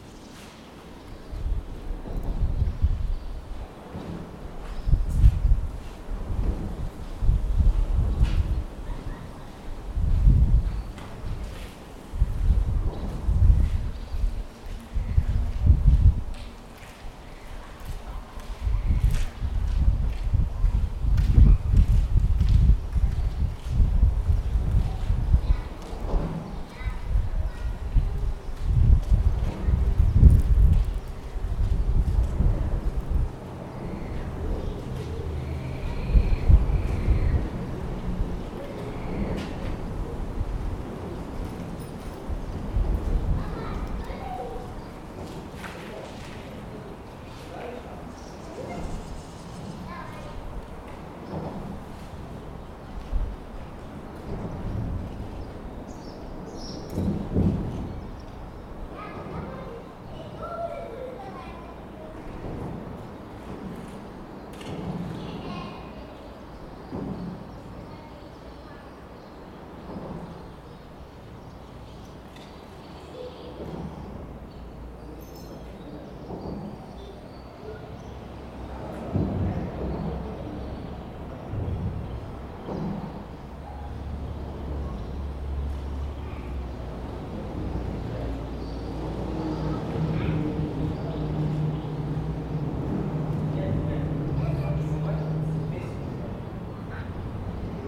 вулиця Рєпіна, Вінниця, Вінницька область, Україна - Alley12,7sound6soundunderthebridge
Ukraine / Vinnytsia / project Alley 12,7 / sound #6 / sound under the bridge